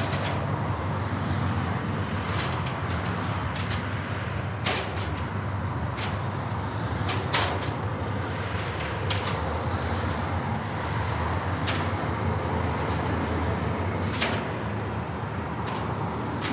{"title": "Traffic island, road, Reading, UK - Clanging wires heard with the traffic of the A33 road", "date": "2015-01-12 11:08:00", "description": "Here are the cables inside the lamppost clanging in the wind as they sound when you pass by, with all the traffic and noise and wind from around as well as the magnificent clanking sound. Sorry about the wind, I was using a little wind fluffy on the EDIROL R-09 but the breeze was a bit much for it.", "latitude": "51.44", "longitude": "-0.98", "altitude": "38", "timezone": "Europe/London"}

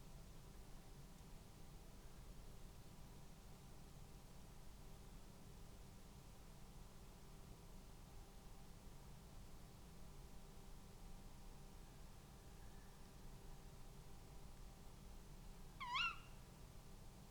{"title": "Luttons, UK - tawny owl soundscape ...", "date": "2020-04-29 01:24:00", "description": "tawny owl soundscape ... song and calls from a pair of birds ... xlr mics in a SASS on a tripod to Zoom H5 ... bird calls ... song ... from ... lapwing ... wood pigeonm ... and something unidentified towards the end ... background noise ...", "latitude": "54.12", "longitude": "-0.54", "altitude": "76", "timezone": "Europe/London"}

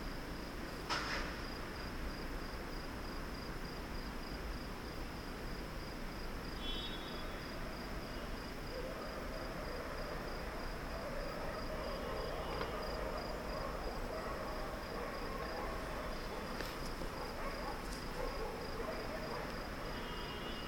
{"title": "Menashe Ben Israel St, Jerusalem, Israel - Old Graveyard in Jerusalem", "date": "2019-11-24 19:00:00", "description": "Old Graveyard in Jerusalem, Evening time", "latitude": "31.78", "longitude": "35.22", "altitude": "779", "timezone": "Asia/Jerusalem"}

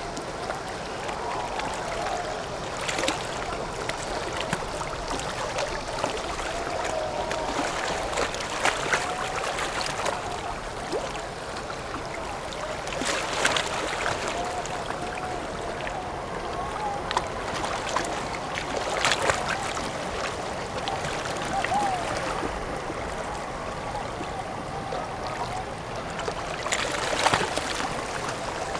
{"title": "白沙灣海水浴場, New Taipei City - The sound of the waves", "date": "2012-06-25 13:09:00", "latitude": "25.29", "longitude": "121.52", "timezone": "Asia/Taipei"}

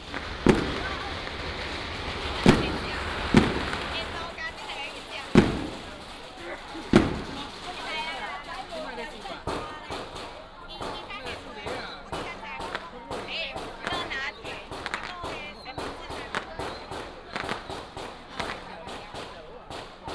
{"title": "白沙屯, 苗栗縣通霄鎮 - Matsu Pilgrimage Procession", "date": "2017-03-09 12:41:00", "description": "Matsu Pilgrimage Procession, Crowded crowd, Fireworks and firecrackers sound", "latitude": "24.56", "longitude": "120.71", "altitude": "10", "timezone": "Asia/Taipei"}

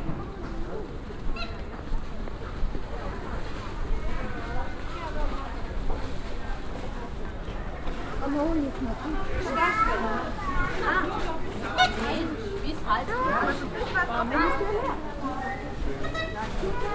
{"title": "erkrath, markt", "description": "project: social ambiences/ listen to the people - in & outdoor nearfield recordings", "latitude": "51.22", "longitude": "6.91", "altitude": "59", "timezone": "GMT+1"}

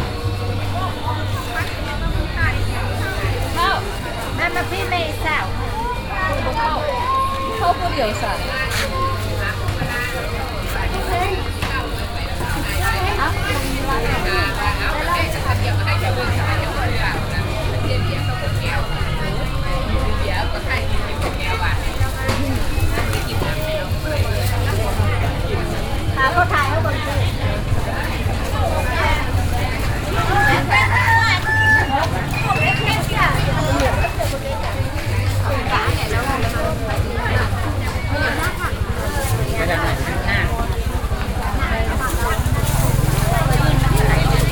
{"date": "2009-04-22 08:15:00", "description": "Luang Prabang, Laos, morning market.\nLuang Prabang, au laos, la traversée matinale dun marché.", "latitude": "19.89", "longitude": "102.13", "altitude": "297", "timezone": "Asia/Vientiane"}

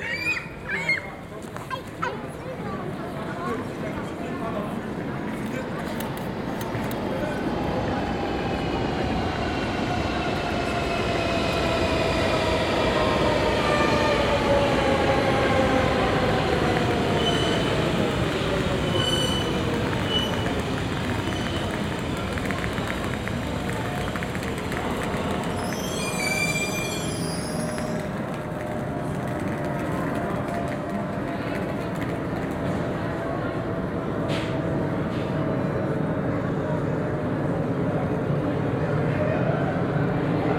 {"title": "Alma, Woluwe-Saint-Lambert, Belgique - Students and metro", "date": "2022-01-13 16:00:00", "description": "Conversations, a few birds, metro and a plane.\nTech Note : SP-TFB-2 binaural microphones → Sony PCM-D100, listen with headphones.", "latitude": "50.85", "longitude": "4.45", "altitude": "67", "timezone": "Europe/Brussels"}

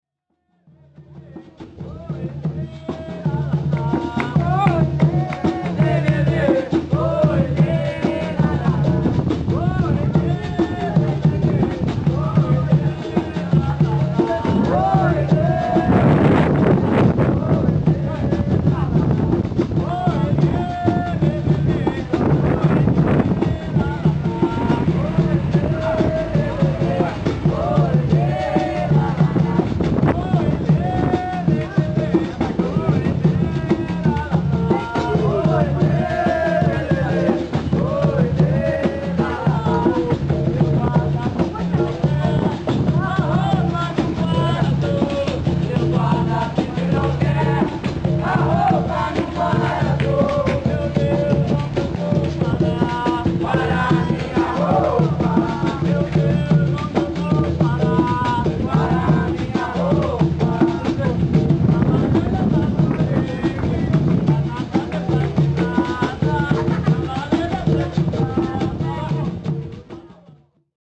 {"date": "2006-04-16 21:38:00", "description": "Capoeira in the streets of windy Mindelo, S. Vicente island, Cabo Verde.\nCaptured with Canon A300s internal mic.", "latitude": "16.89", "longitude": "-24.99", "altitude": "5", "timezone": "Atlantic/Cape_Verde"}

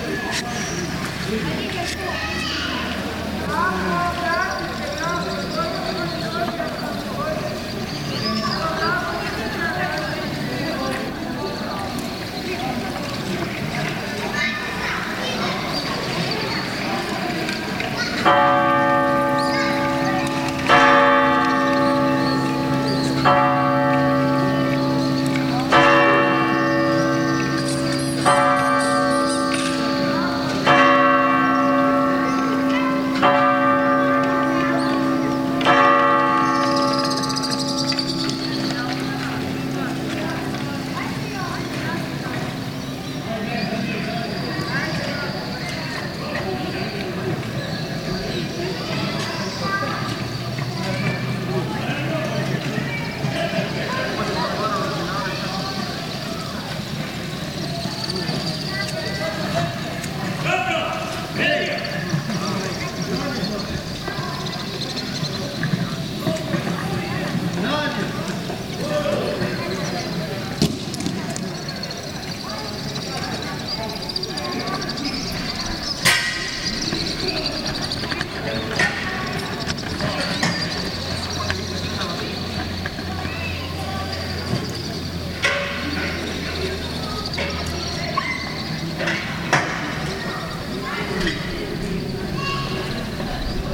{"title": "Dubrovnik, July 1992, washing the pavement after 9 months of siege - Stradun, 1992, water finally", "date": "1992-07-13 20:00:00", "description": "main street of old Dubrovnik, voices of inhabitants, swallows, city-tower bells, voices of workers openning access to water, jet of water", "latitude": "42.64", "longitude": "18.11", "timezone": "Europe/Zagreb"}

